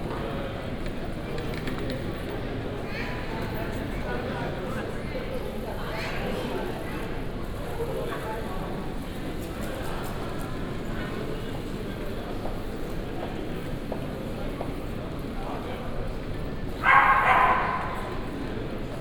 Vienna airport, arrival hall, ambience
Austria, November 2011